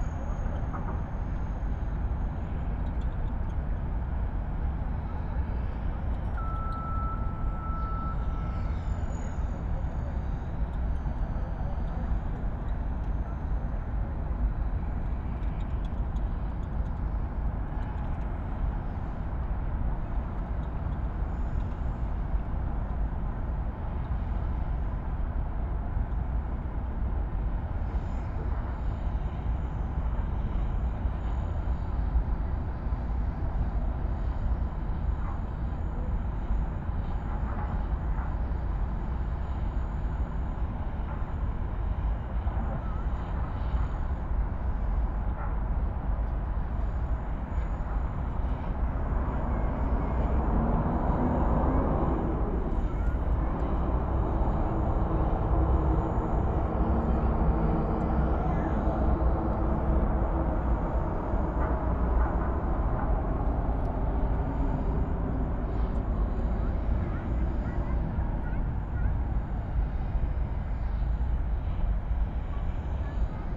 Berlin Tempelhof West
catching city drones and sonic energy on former tempelhof airport. all sounds coming from far, almost no direct sources, reflections from the building, very high gain levels. most of the permanent deep hum comes from the autobahn south of tempelhof, but the city itself has an audible sound too.
(tech note: A-B 60cm NT1a, mic direction NW)